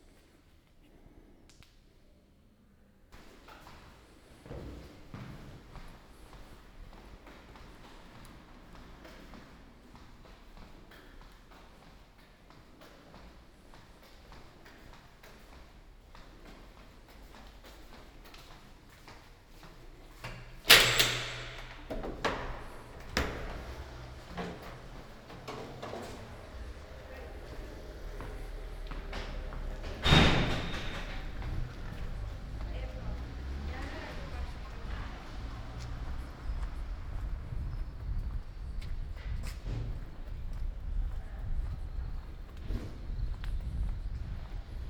Ascolto il tuo cuore, città. I listen to your heart, city. Several chapters **SCROLL DOWN FOR ALL RECORDINGS** - “Outdoor market on Friday in the square at the time of covid19” Soundwalk
“Outdoor market on Friday in the square at the time of covid19” Soundwalk
Chapter CXXIII of Ascolto il tuo cuore, città. I listen to your heart, city.
Friday, August 7th, 2020. Walking in the outdoor market at Piazza Madama Cristina, district of San Salvario, Turin four months and twenty-seven days after the first soundwalk (March 10th) during the night of closure by the law of all the public places due to the epidemic of COVID19.
Start at 8:49 a.m., end at h. 9:04 a.m. duration of recording 15:15”
The entire path is associated with a synchronized GPS track recorded in the (kml, gpx, kmz) files downloadable here:
Piemonte, Italia, 7 August 2020, 08:49